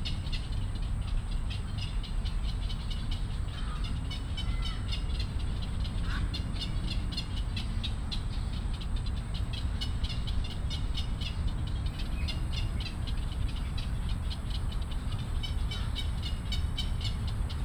{"title": "大安森林公園, Taipei City, Taiwan - Birds and Frogs sound", "date": "2015-06-28 19:50:00", "description": "Bird calls, Frogs chirping, in the park", "latitude": "25.03", "longitude": "121.53", "altitude": "11", "timezone": "Asia/Taipei"}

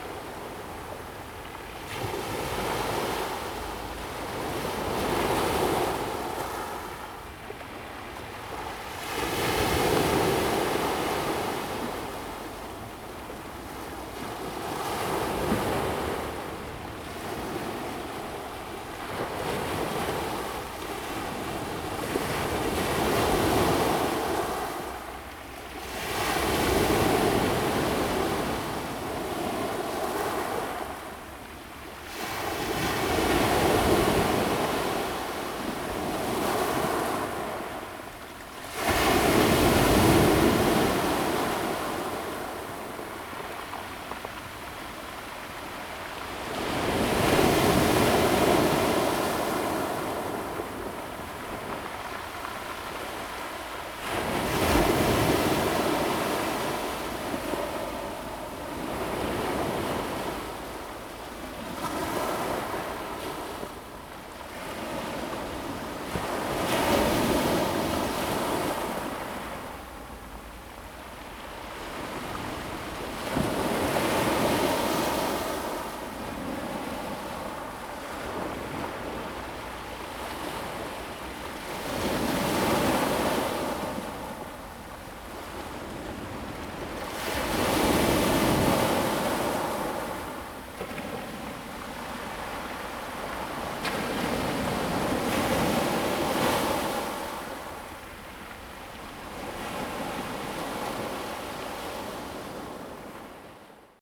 {"title": "Hualien City, Taiwan - sound of the waves", "date": "2016-07-19 16:33:00", "description": "sound of the waves\nZoom H2n MS+XY +Sptial Audio", "latitude": "23.97", "longitude": "121.62", "timezone": "Asia/Taipei"}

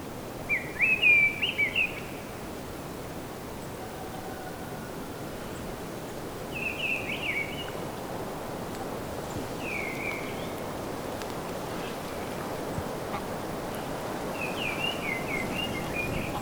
{"title": "Lac de Mondely, La Bastide-de-Sérou, France - Mondely Lake, Ariège, France", "date": "2018-03-20 12:18:00", "description": "Birds around the lake, Zoom H6", "latitude": "43.05", "longitude": "1.44", "altitude": "391", "timezone": "Europe/Paris"}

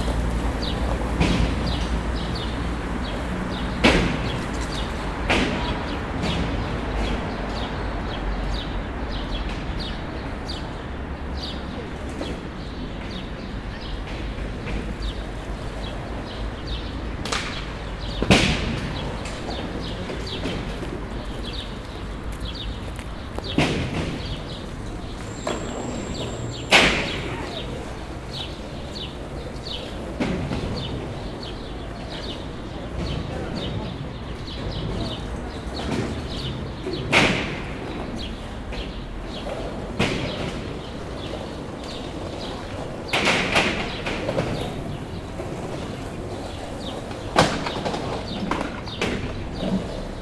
{"title": "Skatepark Rozelor, Cluj-Napoca, Romania - (-195) Skatepark Rozelor, Cluj-Napoca", "date": "2014-05-31 15:54:00", "description": "Skatepark Rozelor with some birds in the background.\nrecorded (probably) with Zoom H2n\nsound posted by Katarzyna Trzeciak", "latitude": "46.76", "longitude": "23.55", "altitude": "348", "timezone": "Europe/Bucharest"}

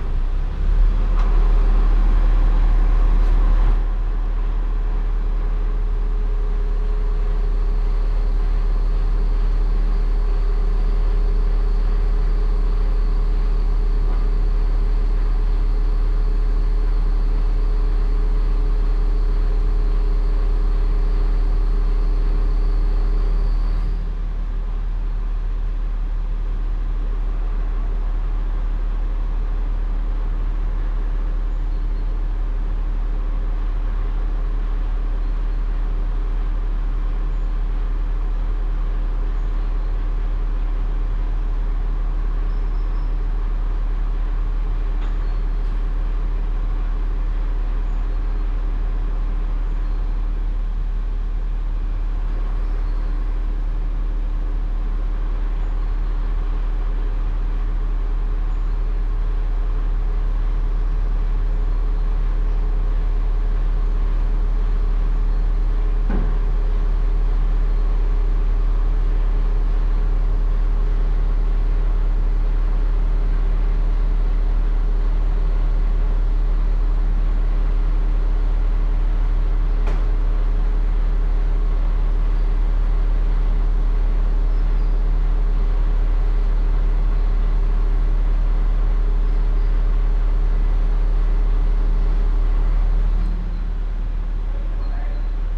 {"title": "from/behind window, Mladinska, Maribor, Slovenia - from/behind window", "date": "2012-09-24 10:19:00", "description": "hydraulic lift and workers - coordinating the action of lifting up big glass wall", "latitude": "46.56", "longitude": "15.65", "altitude": "285", "timezone": "Europe/Ljubljana"}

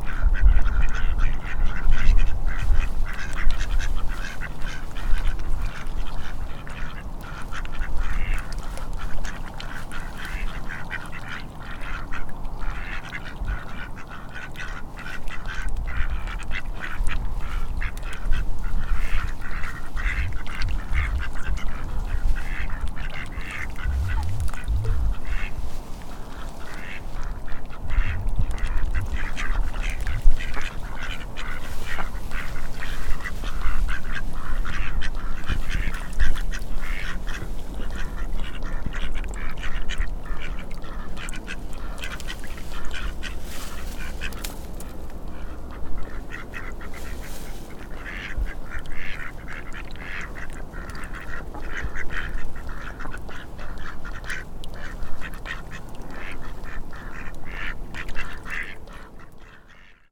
{"title": "Drumsna, Co. Leitrim, Ireland - The Sunken Hum Broadcast 85 - The Chatty Ducks of Drumsna Eating Scones at Sunset - 26 March 2013", "date": "2013-03-25 18:00:00", "description": "There were six ducks hanging out when I went down to the River Shannon. When the scone crumbs got thrown at them, five of the ducks happily scarfed them down but one lonely little duck was kept away from the scone in a bullying fashion by the others.", "latitude": "53.92", "longitude": "-8.01", "altitude": "41", "timezone": "Europe/Dublin"}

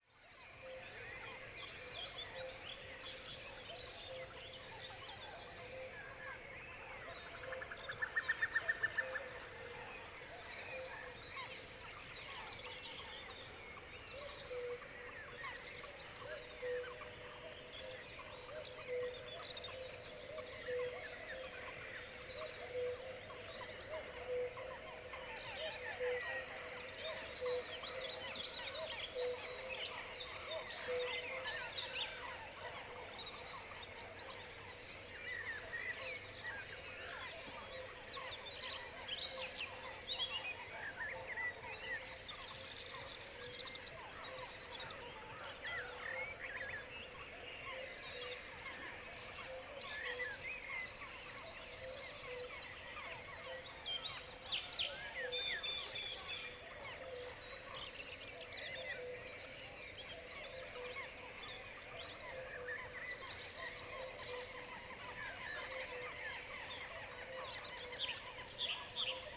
{"title": "Dawn chorus at Meelva Lake, south Estonia", "date": "2011-05-21 03:24:00", "description": "distant sounds of seagulls, cuckoos and other birds", "latitude": "58.12", "longitude": "27.37", "altitude": "46", "timezone": "Europe/Tallinn"}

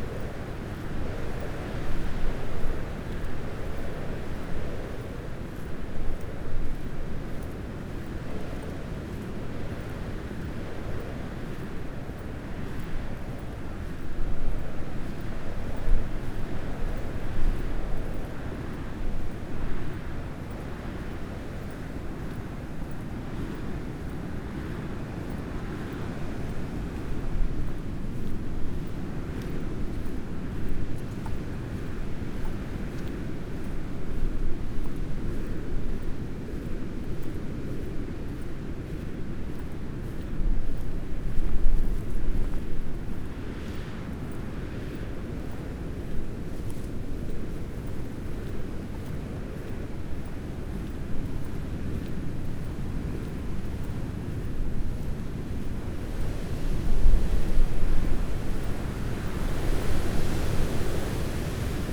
{"title": "Green Ln, Malton, UK - walking the parabolic on a blustery morning ...", "date": "2019-01-13 09:15:00", "description": "walking the parabolic on a blustery morning ... gently swinging the parabolic in walking home mode ... just catching the wind as it blasted through the hedgerows and trees ... bird calls ... dunnock ... tree sparrow ...", "latitude": "54.12", "longitude": "-0.54", "altitude": "79", "timezone": "GMT+1"}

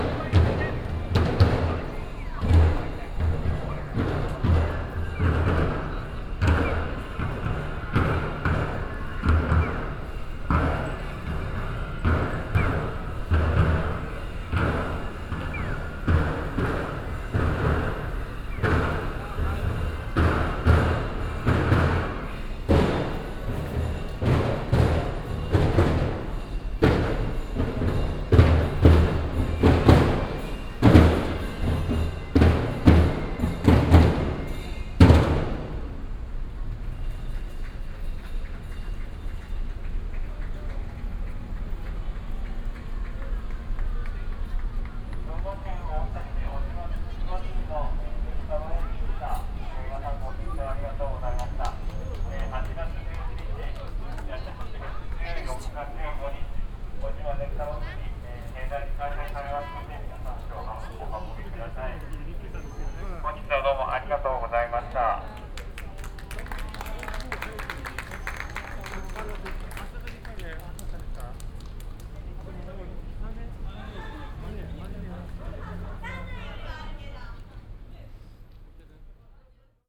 {"title": "tokyo, asakusa station, drummer", "date": "2010-07-28 07:47:00", "description": "a group of traditional japanese drummer performing in front ogf the station entry - short glimpse of the end then general station atmosphere\ninternational city scapes - social ambiences and topographic field recordings", "latitude": "35.71", "longitude": "139.80", "altitude": "11", "timezone": "Asia/Tokyo"}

